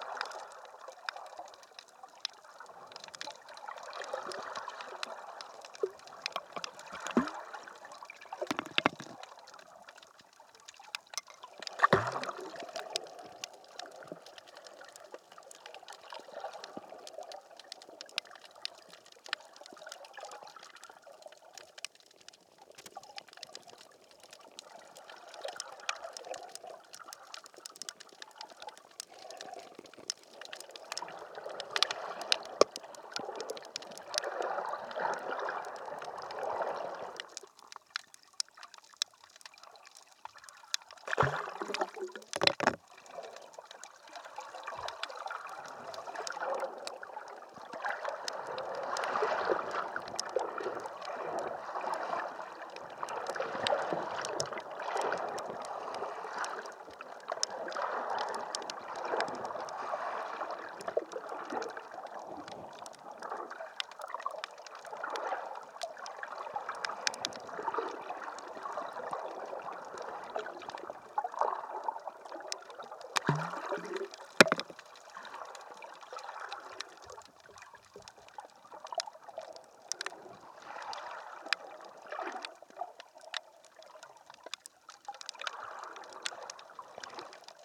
Valle del San Lorenzo, Italien - San Lorenzo al Mare - At the head of the groyne, under water recording with contact mic
Contact mic attached to a bottle, about 1m submerged, throwing pebbles into the water. Mono recording.
[Hi-MD-recorder Sony MZ-NH900, contact mic by Simon Bauer]
Costarainera IM, Italy, August 2015